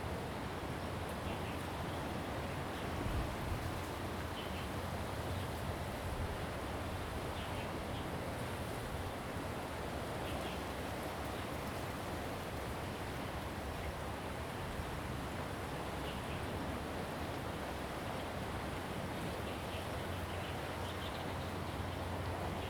石頭埔, 淡水區, New Taipei City - Bird and wave sounds
Sound of the waves, Bird sounds, ruins
Zoom H2n MS+XY